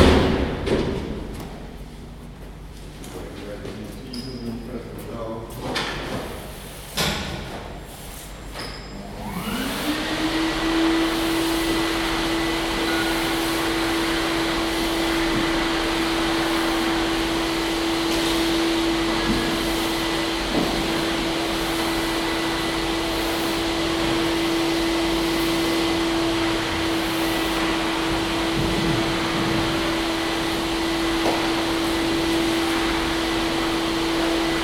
Ústí nad Labem-město, Česká republika - Emil Filla Gallery
Cleaning the gallery before the opening of the exhibition.